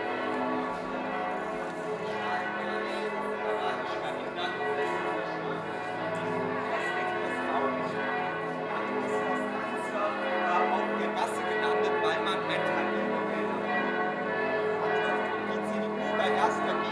Fifth and last part of the recording on the 14th of August 2018 in the new 'old town' that is supposed to be opened in late September. Already a lot of guides are leading through this new area, explaining buildings and constructions. The bells of the catholic church are calling for the evening mass. The fountain of the Hühnermarkt is audible. Several voices from visitors. Some motifs are repeated: the little chapel, that is already mentioned in the first part, the character of the 'old town', the barber shop is again audible....
Frankfurt am Main, Germany